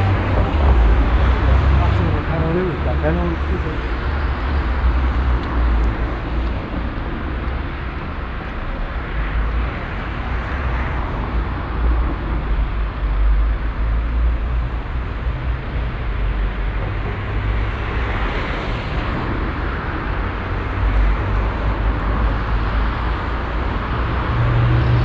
Central Area, Cluj-Napoca, Rumänien - Cluj, street crossing
At a street crossing of one of the central city main roads of Cluj. The sounds of traffic and people passing by. At the end in the distance the church bells of the nearby catholic church.
international city scapes - topographic field recordings and social ambiences
15 November 2012, 11:00